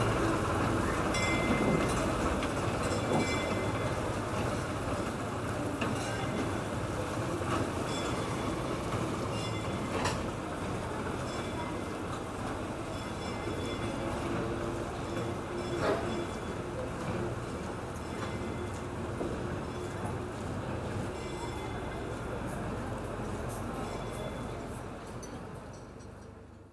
gamla linköping, old tourist tram
Linköping, Sweden, 22 August